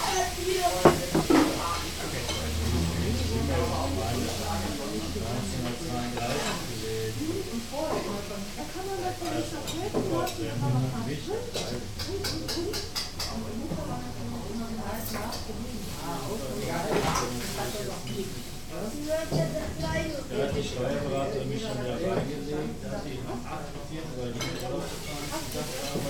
köln, maastrichter str., king wah - chicken canton style, hassle
22.04.2009 19:45 chicken kanton style. the owner argues with his son.